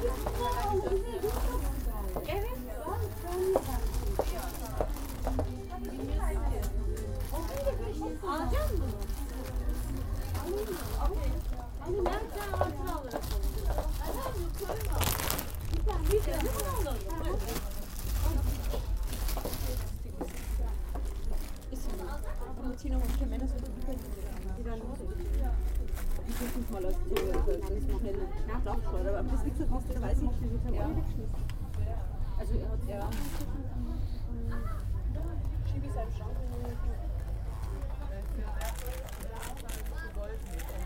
cologne, main station, clothing shop - cologne, main station, clothes shop
clothes shop in the main station mall. recorded june 6, 2008. - project: "hasenbrot - a private sound diary"